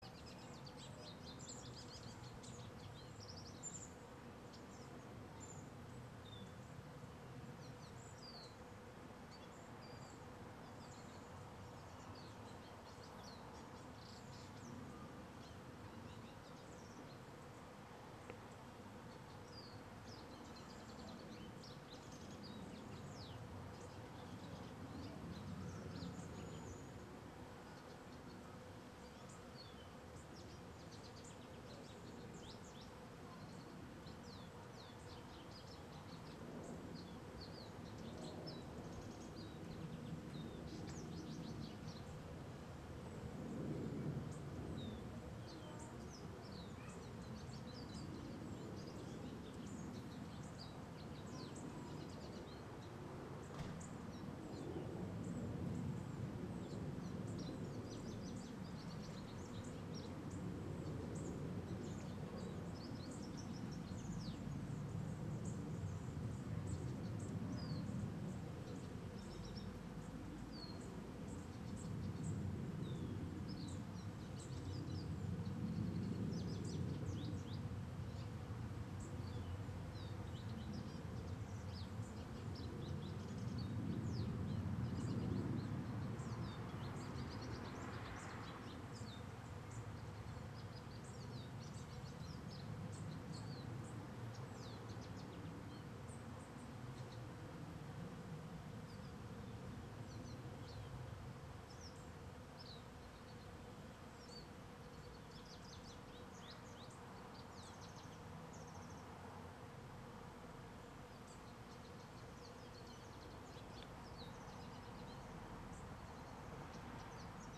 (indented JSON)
{
  "title": "Mountain blvd. sound",
  "date": "2010-03-17 04:45:00",
  "description": "Mountain blvd. ambience with dogs, birds, rooster, kids from day care, airplanes, cars and the bees in the cherry tree",
  "latitude": "37.79",
  "longitude": "-122.18",
  "altitude": "87",
  "timezone": "US/Pacific"
}